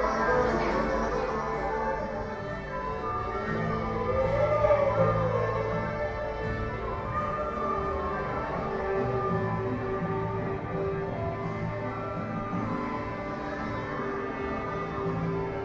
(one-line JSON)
{"title": "Vigala manor, tuning folk instruments", "date": "2010-08-13 17:33:00", "description": "(binaural soundwalk) folk music camp for youth, ambience of soundcheck and tuning for final performance", "latitude": "58.78", "longitude": "24.25", "timezone": "Europe/Tallinn"}